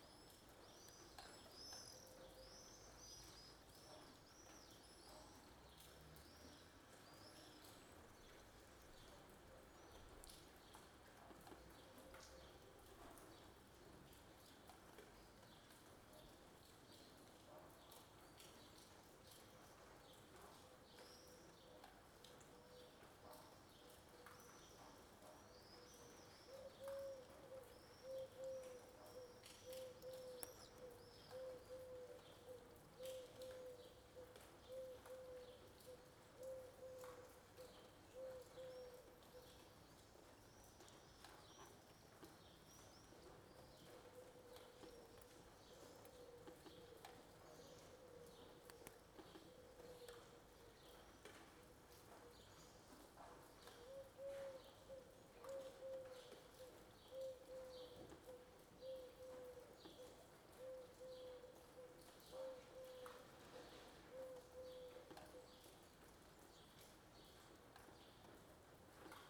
Raw field recording made from an open window. The sound of the rain, birds, neighbours, and also sounds from the interior of the house. Recorded using a Zoom H2n placed on the ledge of the window.
Catalunya, España, 19 April 2020, 08:30